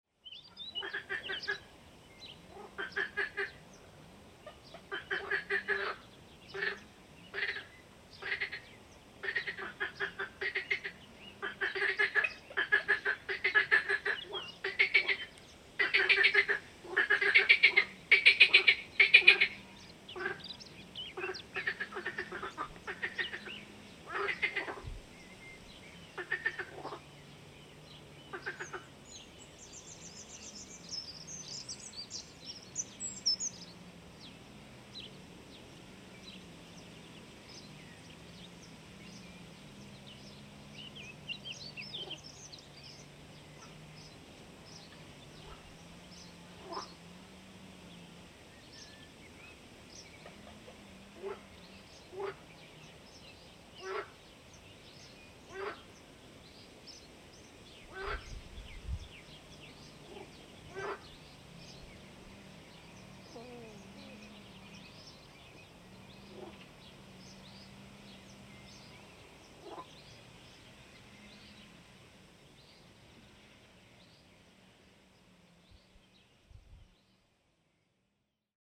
Chemin des Ronferons, Merville-Franceville-Plage, France - Frogs
Frogs during the Covid-19 pandemic, Zoom H3VR, Binaural
France métropolitaine, France, 23 April 2020